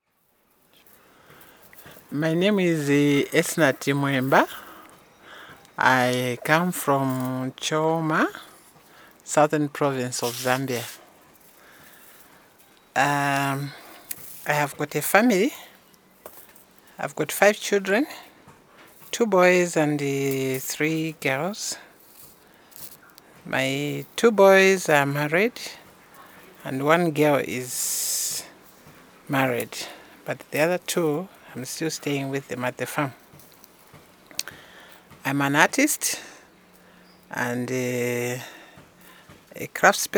Harmony, Choma, Zambia - My Mum inspired me...
Esnart Mweemba is an artist and craftswomen, researcher and trainer from Choma Zambia and belongs to the BaTonga. We made these recordings in Esnart’s studio on her farm in Harmony (between Choma and Monze). So we had plenty of material and inspiration around us to go into detail in our conversation; and we did. Esnart shares her knowledge and experience with us, especially about traditional beadwork. She did extensive research in this field, which she gathered in interviews with elders... here she tells how she learnt her art from her mum who was blind...
14 November 2012